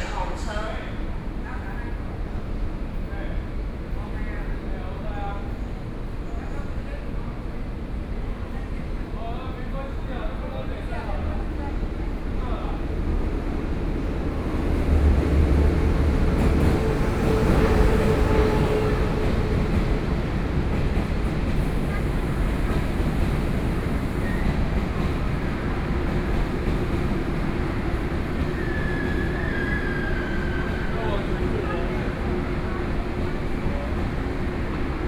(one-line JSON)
{"title": "Taipei Main Station - the platform", "date": "2013-09-24 15:01:00", "description": "On the platform waiting for the train, Station broadcast messages, Train Arrival and Departure, Sony PCM D50 + Soundman OKM II", "latitude": "25.05", "longitude": "121.52", "altitude": "29", "timezone": "Asia/Taipei"}